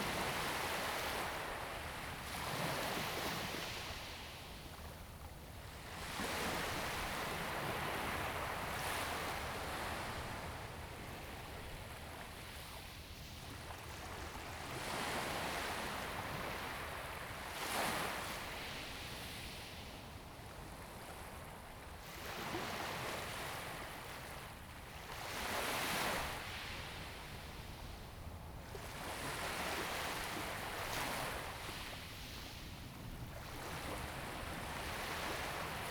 龍門沙灘, Huxi Township - At the beach
At the beach, sound of the Waves
Zoom H2n MS+XY
Penghu County, Taiwan, 21 October